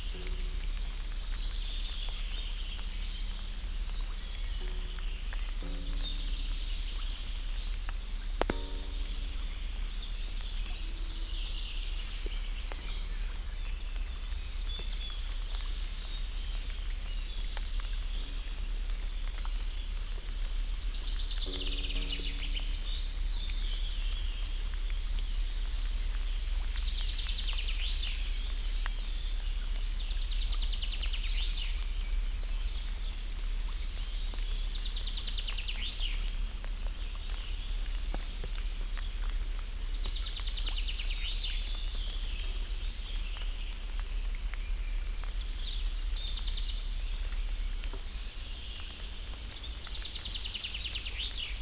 Morasko Nature Reserve, beaver pond - metal plate
(binaural) standing on a short, rickety pier extending into the pond. thick raindrops splash on the water surface. every once in a while a raindrop hits an information post on the right.